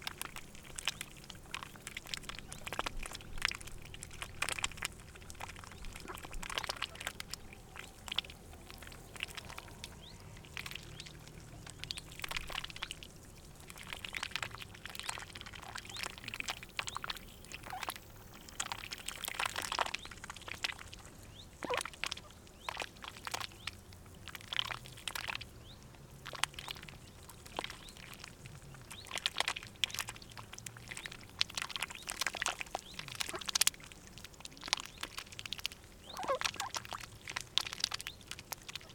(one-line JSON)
{"title": "Bedřichov dam, Bedřichov, Česko - Ducks", "date": "2020-08-02 17:40:00", "description": "Ducks on the banks of Bedřichov Dam. Sunny warm summer afternoon.", "latitude": "50.82", "longitude": "15.14", "altitude": "774", "timezone": "Europe/Prague"}